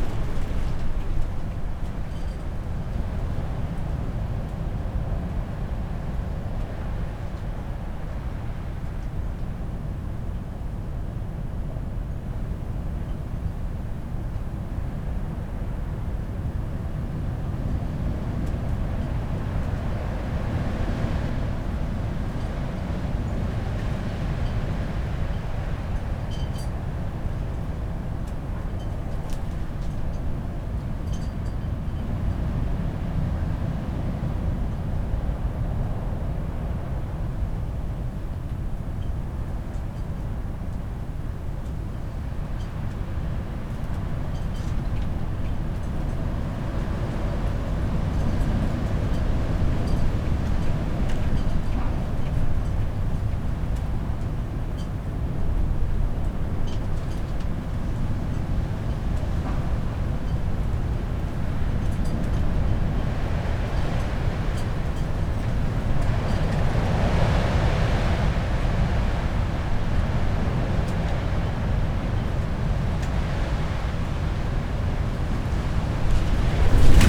Chapel Fields, Helperthorpe, Malton, UK - inside poly tunnel ... outside approaching storm ...
inside poly tunnel ... outside approaching storm ... lavalier mics clipped to sandwich box ...
3 March 2019, 21:00